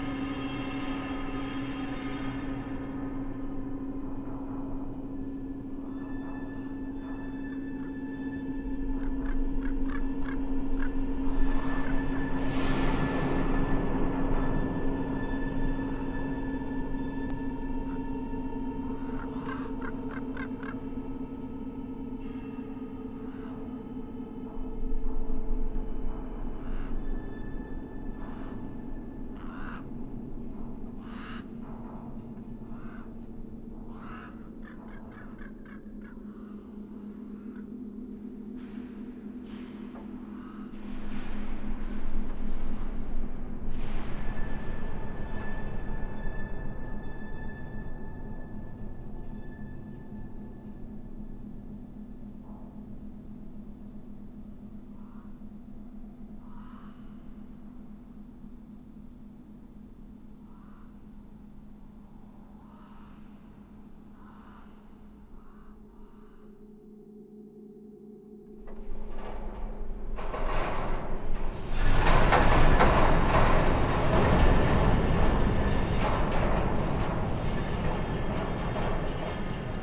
{"title": "Bartlett, CA, USA - Lone Pine Earthquake and Aftershocks", "date": "2020-06-20 10:40:00", "description": "Metabolic Studio Sonic Division Archives:\nMagnitude 5.8 earthquake and aftershocks centered in the town of Lone Pine on June 24th, 2020. Recorded from inside 80 foot tall abandoned silo. One microphone inside the silo and two microphones inside adjacent abandoned factory.", "latitude": "36.48", "longitude": "-118.03", "altitude": "1122", "timezone": "America/Los_Angeles"}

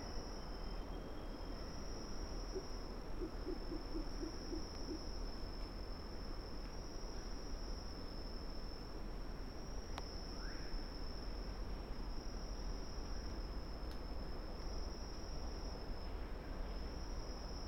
{"title": "Vunisea-Namara Road, Kadavu Island, Fidschi - Forest on Kadavu at ten in the morning", "date": "2012-06-28 10:00:00", "description": "Recorded with a Sound Devices 702 field recorder and a modified Crown - SASS setup incorporating two Sennheiser mkh 20 microphones.", "latitude": "-19.04", "longitude": "178.17", "altitude": "120", "timezone": "Pacific/Fiji"}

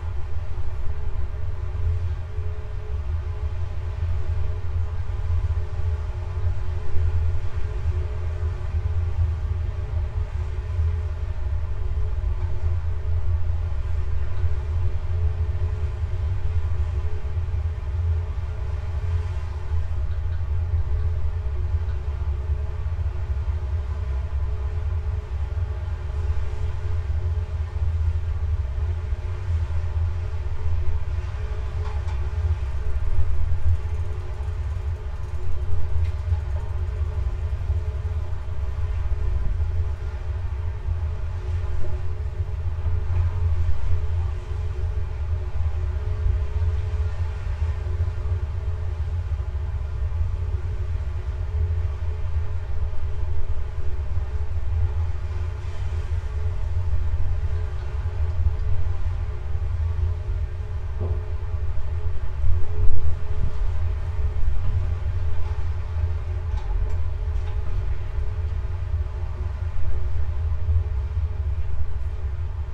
{"title": "Clay urns (항아리) exposed to a gale", "date": "2018-12-14 16:00:00", "description": "가파도 (Gapa-do) is a very small island south of Jeju-do...it is very low lying and exposed to the elements...the clay urns are used for fermenting foods such as kimchi and for making Magkeolli (rice wine) and are to be found at most households throughout Korea...", "latitude": "33.17", "longitude": "126.27", "altitude": "3", "timezone": "Asia/Seoul"}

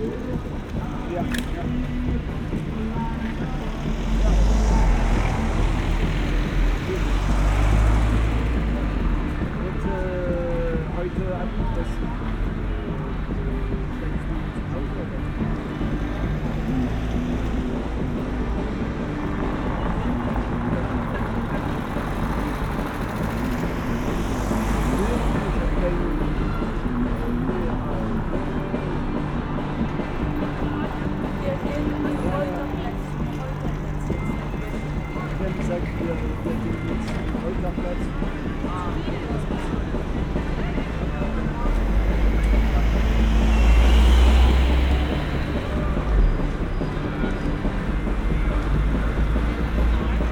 {"title": "Berlin: Vermessungspunkt Maybachufer / Bürknerstraße - Klangvermessung Kreuzkölln ::: 21.06.2012 ::: 22:59", "date": "2012-06-21 22:59:00", "latitude": "52.49", "longitude": "13.43", "altitude": "39", "timezone": "Europe/Berlin"}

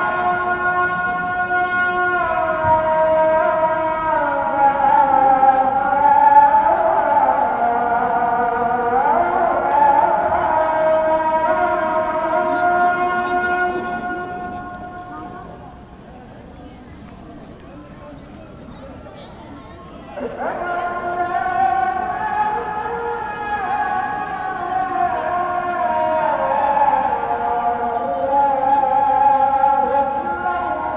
{"title": "Eminonu, Yeni Camii, Istanbul", "date": "2011-02-19 14:20:00", "description": "call for prayer, singing, people walking by", "latitude": "41.02", "longitude": "28.97", "altitude": "11", "timezone": "Europe/Istanbul"}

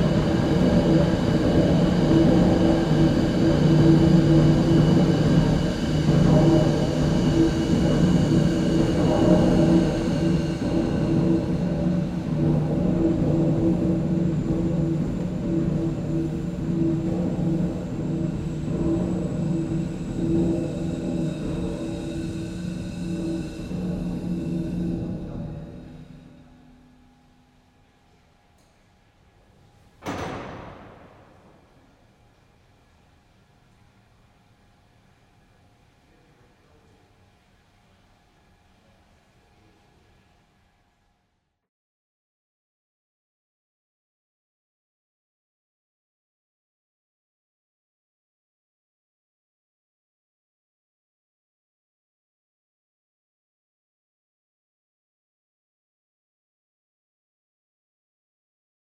{"title": "Kelham Island Museum, Sheffield, South Yorkshire, UK - River Don Engine. Kelham Island Museum", "date": "2012-05-08 11:55:00", "description": "The River Don Engine. Housed within Kelham Island Museum.\nDeveloping 12,000 horsepower, The River Don Engine is a 1905-built steam engine which was used for hot rolling steel armour plate. The engine is run for approximately two minutes every day at 12 and 2pm for visitors.\n(recorded with Marantz 661 with Rode NT4)", "latitude": "53.39", "longitude": "-1.47", "altitude": "52", "timezone": "Europe/London"}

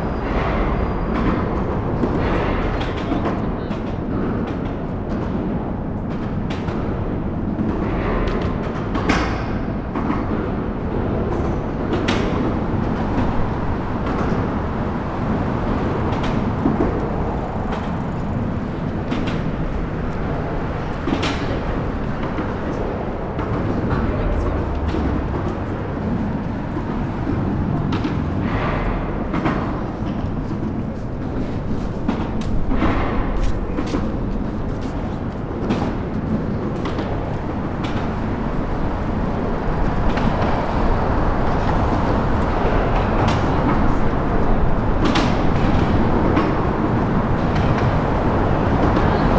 {"description": "Downtown Chicago, River bridge under lakeshore, cars, loud, industrial", "latitude": "41.89", "longitude": "-87.61", "altitude": "175", "timezone": "Europe/Berlin"}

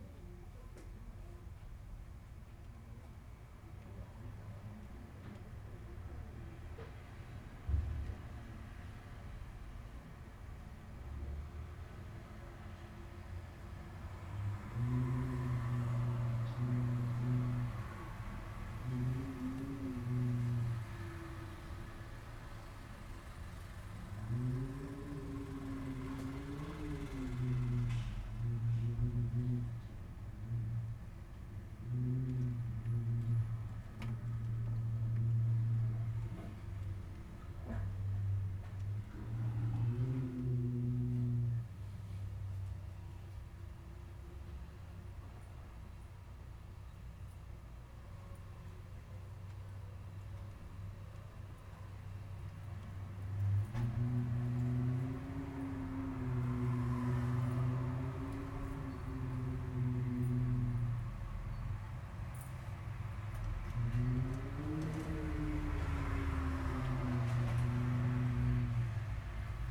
{"title": "Xihu Township, Changhua County - The sound of the wind", "date": "2014-03-08 20:20:00", "description": "The sound of the wind, In the hotel\nZoom H6 MS", "latitude": "23.96", "longitude": "120.47", "altitude": "22", "timezone": "Asia/Taipei"}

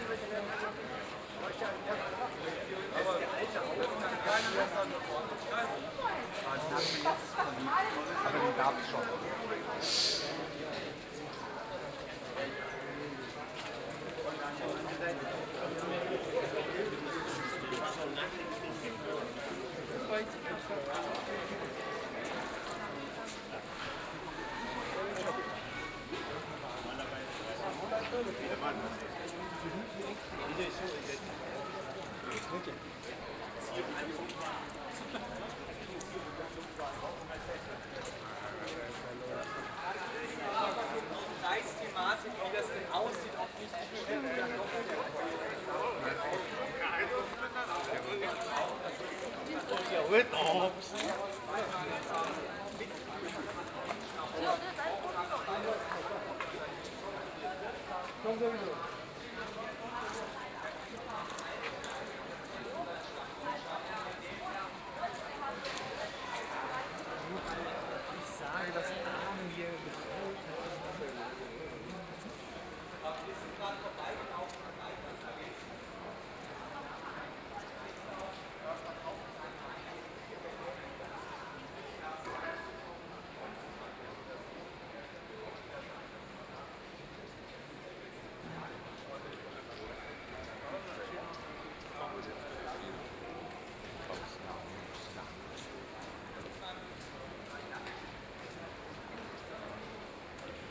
{"title": "Hühnermarkt, Frankfurt am Main, Deutschland - 14th of August 2018 Teil 3", "date": "2018-08-14 18:00:00", "description": "Third part of the walk through the newly built and contested area of the 'old town' in Frankfurt. A guide is talking about reconstruction as a technique or rebuilding an area - the churchbells are tolling, people talking about old and new, about the underground, some construction workers are discussing about doors and if they are open or closed. All recordings are binaural.", "latitude": "50.11", "longitude": "8.68", "altitude": "100", "timezone": "GMT+1"}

